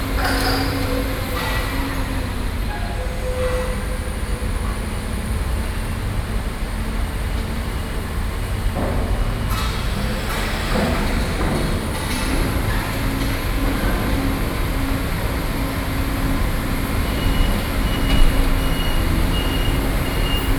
Zhonghe, New Taipei City - Before the bus stop